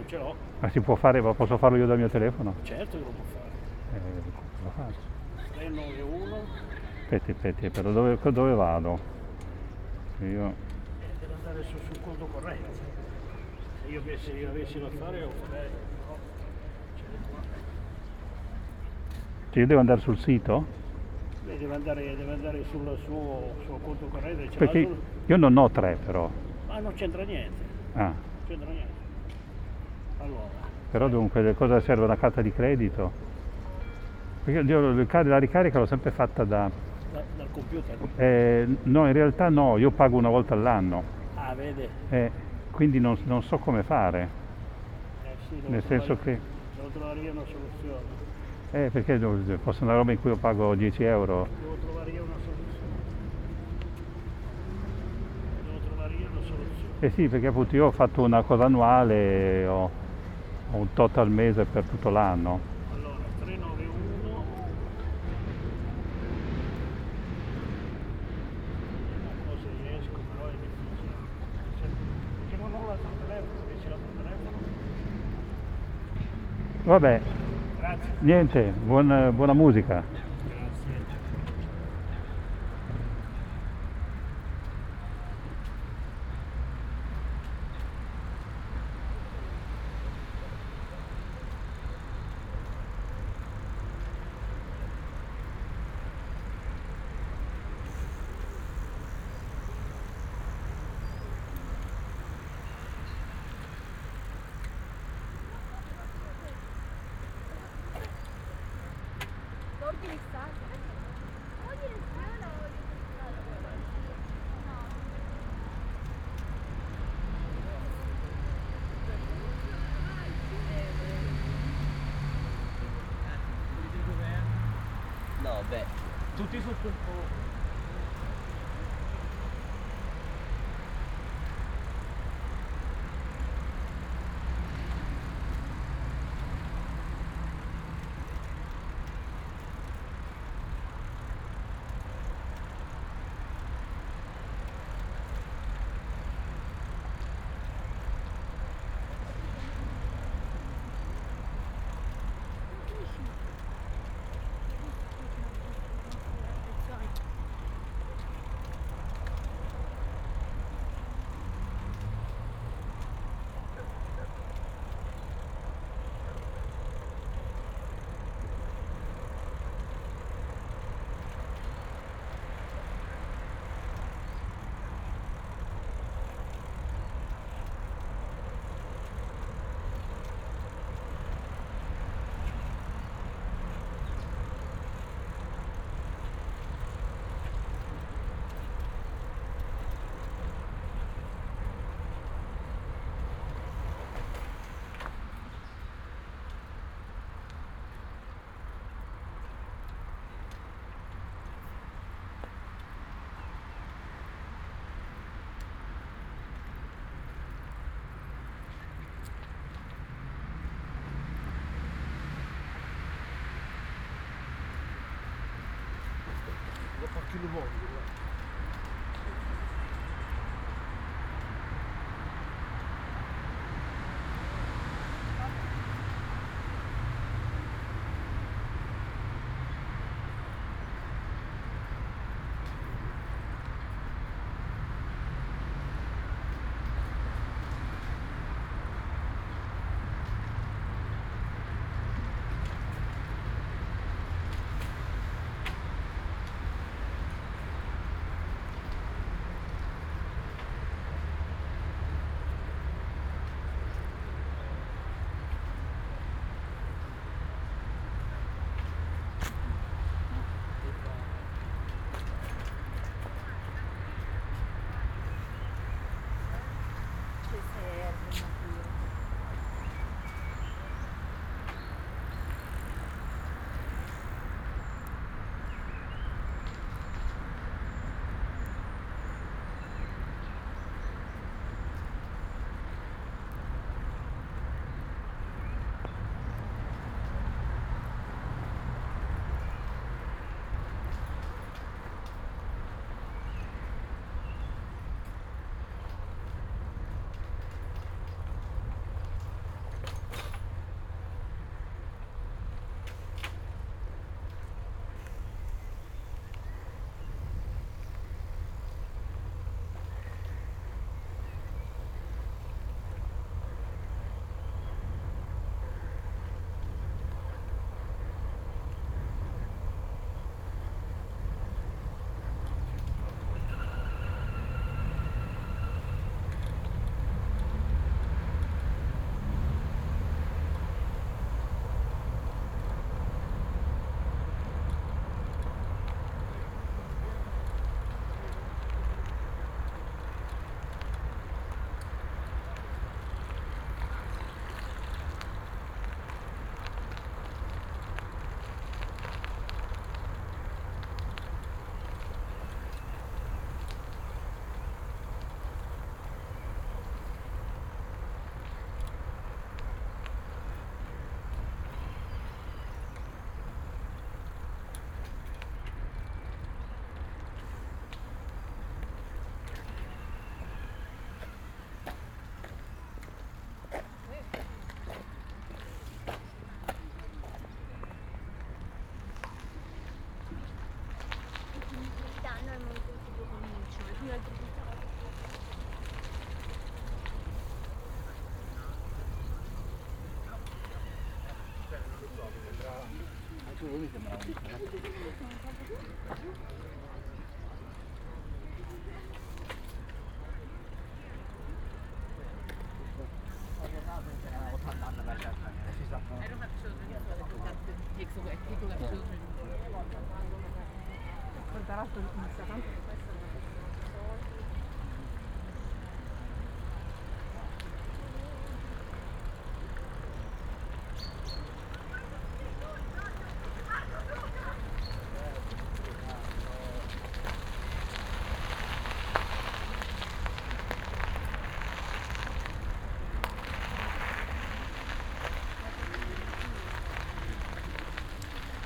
"Friday's soundbike on the banks of the Po River in the days of COVID19" Soundbike"
Chapter LXXXIV of Ascolto il tuo cuore, città. I listen to your heart, city
Friday, May 22th 2020. Biking on tha bank of Po Rivver, Valentinopark, seventy three days after (but day twenty of Phase II and day six of Phase IIB) of emergency disposition due to the epidemic of COVID19.
Start at 4:07 p.m. end at 5:02 p.m. duration of recording 55’36”
The entire path is associated with a synchronized GPS track recorded in the (kmz, kml, gpx) files downloadable here: